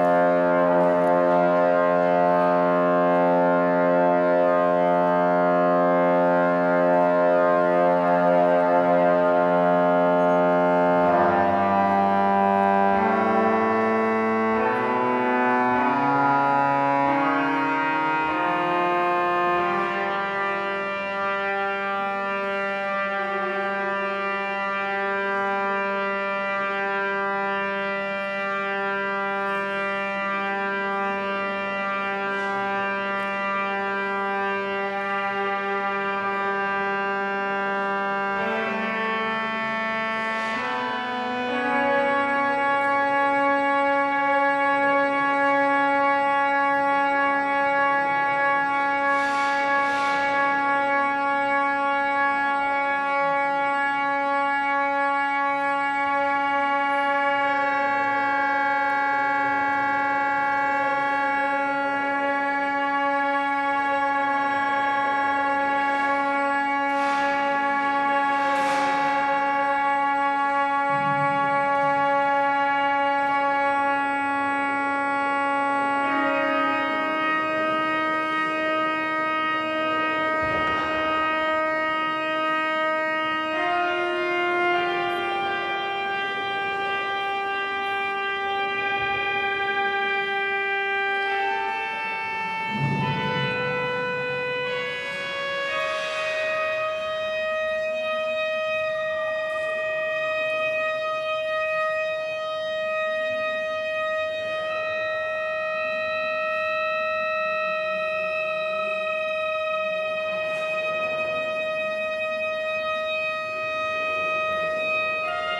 Miðbær, Reykjavik, Iceland - Tuning of church organ
Accidental microtonal composition